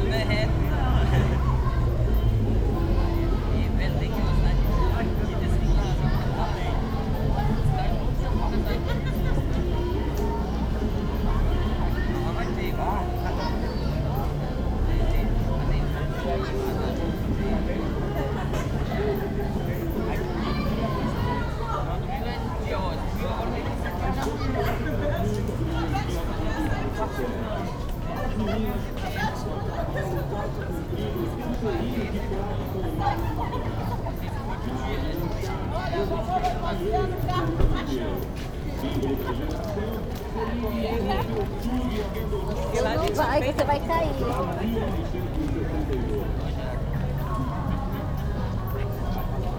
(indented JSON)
{
  "title": "Manaus Amazonas Brazil - Praça São Sebastian A Noite / Amazona Opera Theater square at nightfall WLP WLD",
  "date": "2011-07-18 19:30:00",
  "description": "At 19h30 people use to walk, stand or seat in the three main spots of the Amazonas theater plaza: the steps of the fountain (student, low money), Armando Bar intellectuals & other (large liver), Tacaca da Giselle (large families, Tacaca is a kind of soup eatable/drinkable in a cuia calabash, and made of cassava starch, cassava juice, jambu and shrimps, especially consumed at nightfall).\nIn the ambiance sound track recorded near Tacaca da Giselle we hear small electrics motorbikes, playing children, distant cars, various distant musics, the church bells at 19h30, and people walking, speaking, shouting.",
  "latitude": "-3.13",
  "longitude": "-60.02",
  "altitude": "39",
  "timezone": "America/Manaus"
}